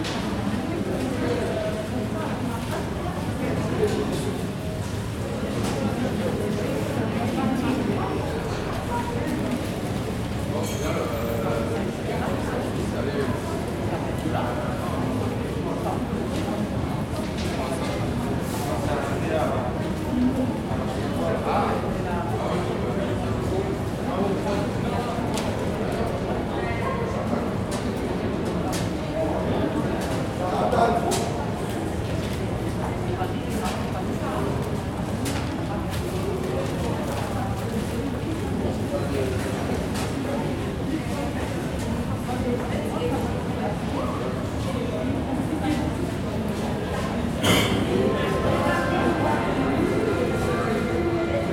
Chem. du Verdon, Toulouse, France - metro station
metro station
Captation : Zoom H4n
France métropolitaine, France